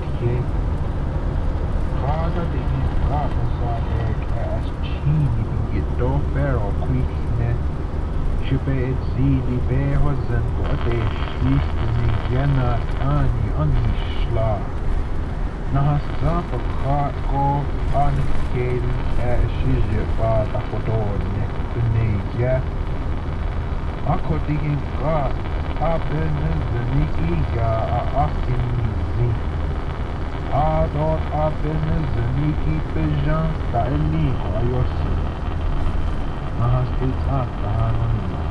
neoscenes: Navaho radio on the road